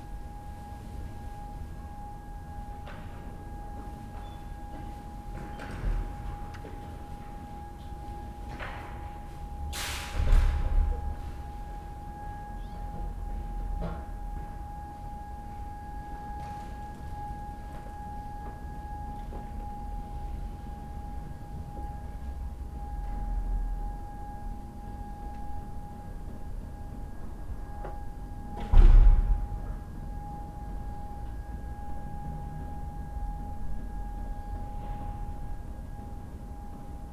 Hiddenseer Str., Berlin, Germany - The Hinterhof from my 3rd floor window. Monday, 3 days after Covid-19 restrictions
The Hinterhof is eerily quiet. It's as if many of those living here don't exist. Maybe they've gone away. I'm not hearing children any more. This tone is often present and sounds no different from always (it is an accidental wind flute from one of the external pipes from the heating system) but it's detail is more apparent with less urban rumble. There's a slightly harmonic hiss associated with it hasn't been clear till now. Also the city's sub bass is more audible. Interesting which sounds are revealed when normal acoustic backdrop changes. There also more sirens, presumably ambulances.